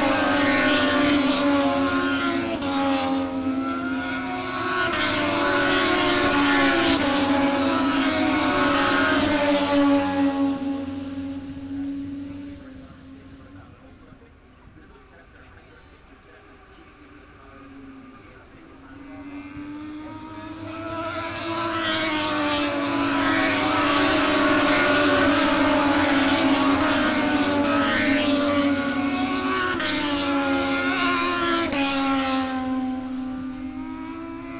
Silverstone Circuit, Towcester, UK - british motorcycle grand prix ... 2021
moto two free practice three ... copse corner ... dpa 4060s to MixPre3 ...
East Midlands, England, United Kingdom, 28 August, 10:55